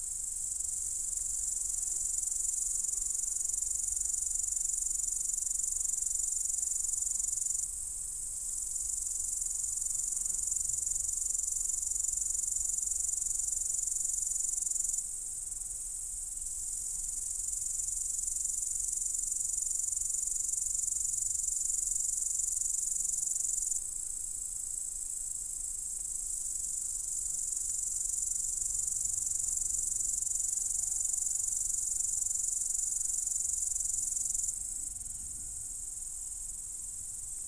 Bėdžiai, Lithuania, in the grass (lows appearing)
High grass in the forest...high sounds of insects...lows appear - lows are so human...